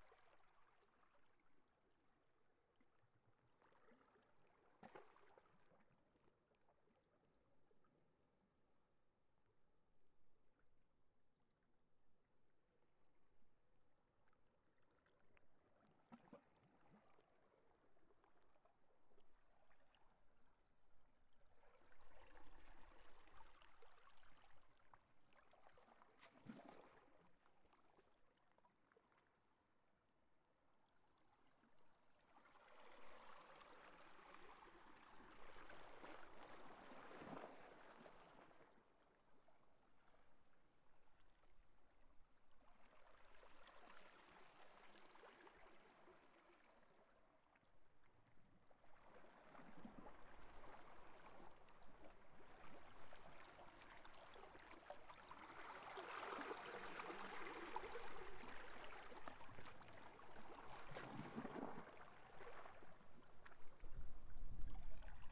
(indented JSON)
{
  "title": "Brett Avenue, Takapuna, Auckland, New Zealand - Quiet ocean water",
  "date": "2020-08-26 14:12:00",
  "description": "Directional microphone, on the edge of lava field and the sea",
  "latitude": "-36.78",
  "longitude": "174.78",
  "timezone": "Pacific/Auckland"
}